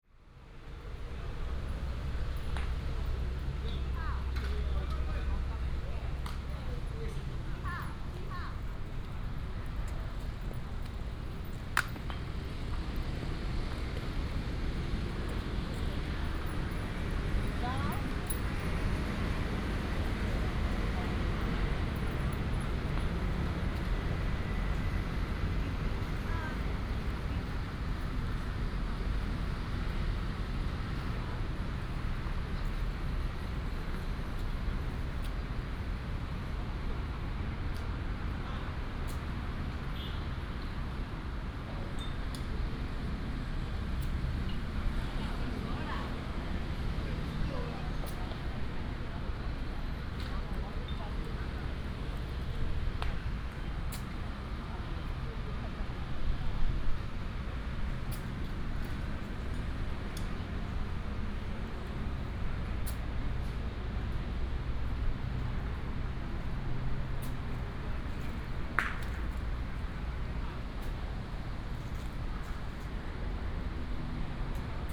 北大公園, Hsinchu City - in the park
in the park, birds, A group of old people playing croquet on the green space, wind, Binaural recordings, Sony PCM D100+ Soundman OKM II